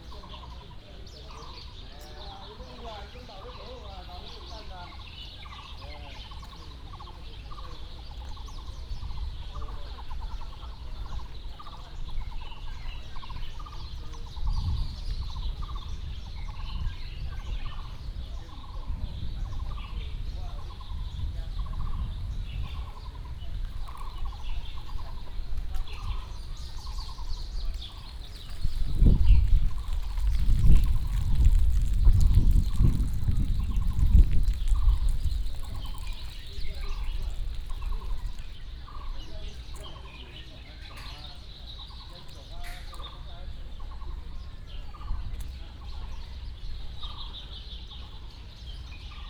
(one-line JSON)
{"title": "嘉義公園槌球場, Chiayi City - in the Park", "date": "2017-04-18 11:04:00", "description": "in the Park, birds sound", "latitude": "23.48", "longitude": "120.47", "altitude": "58", "timezone": "Asia/Taipei"}